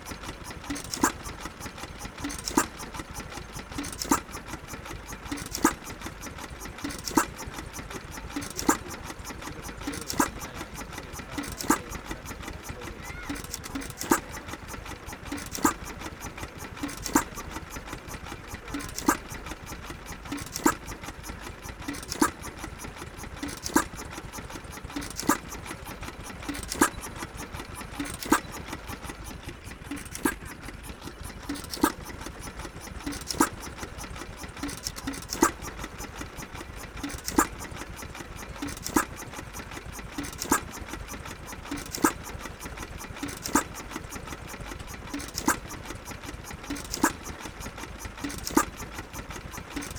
Static engine ... pre WW1 Amanco open crank hit and miss general machine ... used to power farm machinery or as a water pump ...